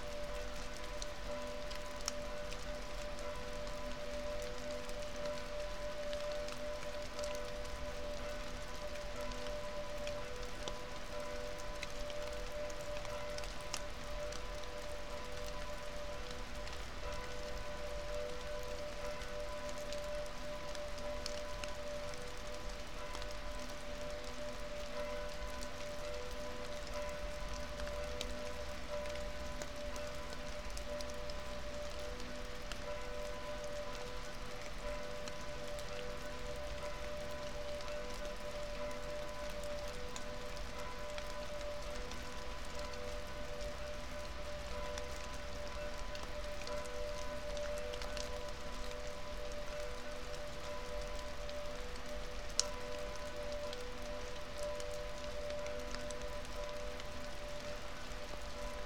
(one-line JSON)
{"title": "Hausbergstraße, Reit, Deutschland - evensong warm winter", "date": "2020-12-26 21:46:00", "description": "Rain, melting snow and evensong ringing", "latitude": "47.68", "longitude": "12.47", "altitude": "749", "timezone": "Europe/Berlin"}